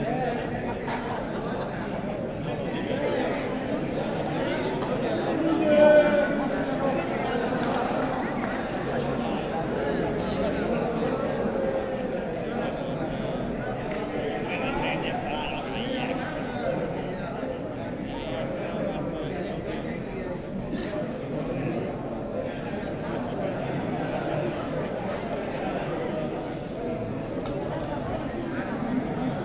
Plaça de la Revolució
People having nice time in a square in the district of Gracia, Barcelona, during night.